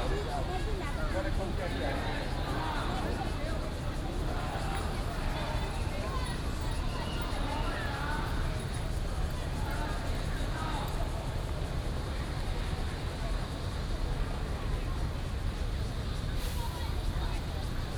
徐州路17號, Taipei City - Protest march
In the corner of the road, Protest march, Traffic Sound
Zhongzheng District, Taipei City, Taiwan